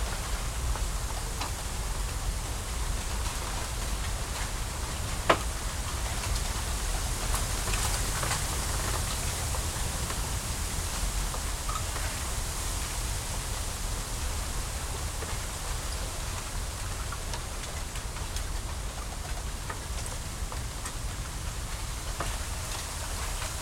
Bamboo grove in Tsuji, Rittō City, Shiga Prefecture, Japan - Wind in Bamboo
Wind passing through a small bamboo grove with some dry and fallen branches, aircraft and nearby traffic. Recorded with a Sony PCM-M10 recorder and two small omnidirectional microphones attached to either side of a backpack lying on the ground.
Rittō-shi, Tsuji, 八王子薬師堂, 13 March